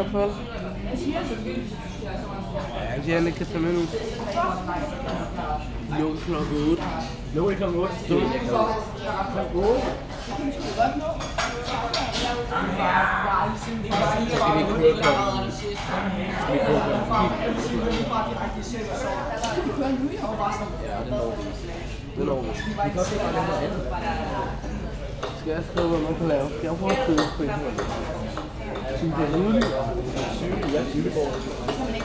København, Denmark - Kebab restaurant
Into the kebab snack restaurant, a lot of young people are discussing and joking. A teenager is phoning just near the microphones, inviting a friend to come to the barbecue.
April 2019